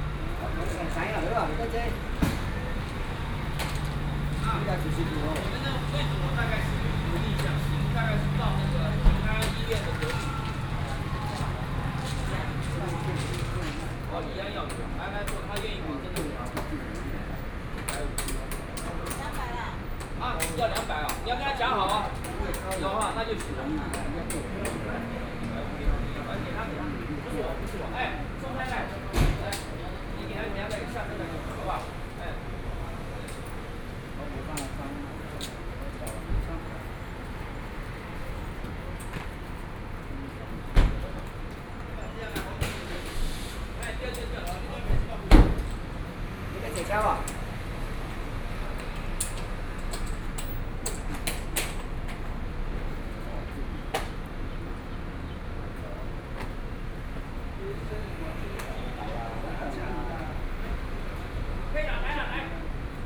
Pingtung Station, Taiwan - Taxi seating area
Outside the station, Taxi seating area
Pingtung County, Taiwan, 4 September 2014